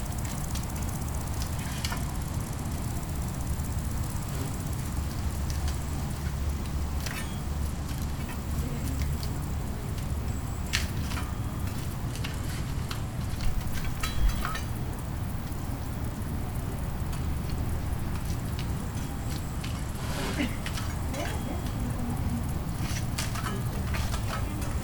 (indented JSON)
{
  "title": "Valdisotto SO, Italia - insects 2",
  "date": "2012-08-18 17:38:00",
  "latitude": "46.45",
  "longitude": "10.37",
  "altitude": "1376",
  "timezone": "Europe/Rome"
}